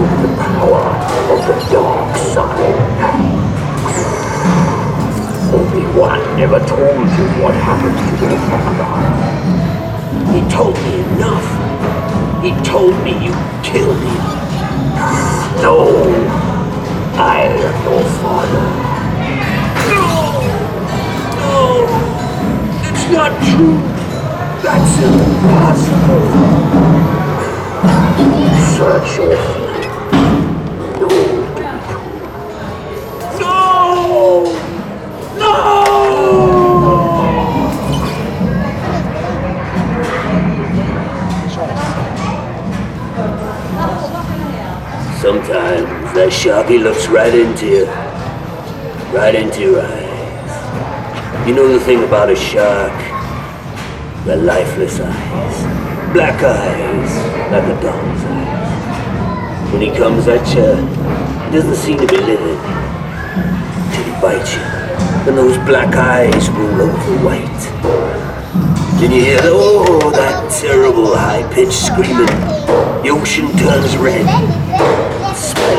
Møhlenpris, Bergen, Norwegen - Bergen - science center Vilvite, movie roboter
Inside the science museum.
The sound of a facial expression control roboter, that interprets famous movie scene dialogues.
international sound scapes - topographic field recordings and social ambiences